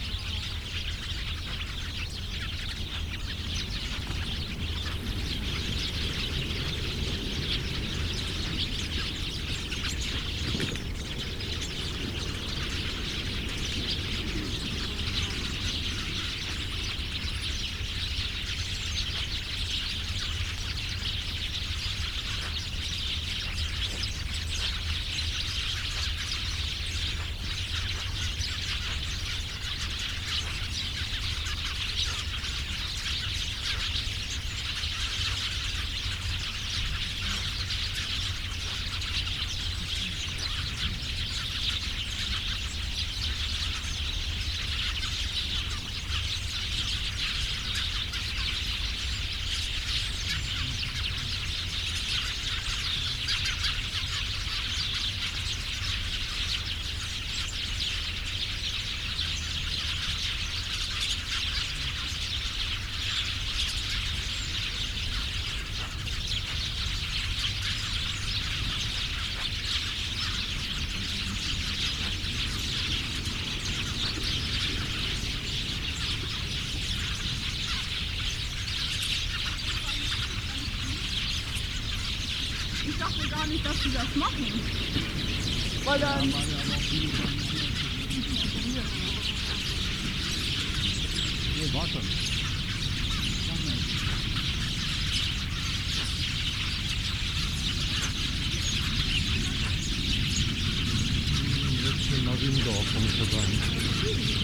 großbeeren, heinersdorf: mauerweg - borderline: berlin wall trail
elder tree attracting various birds (more and more and more)
borderline: october 1, 2011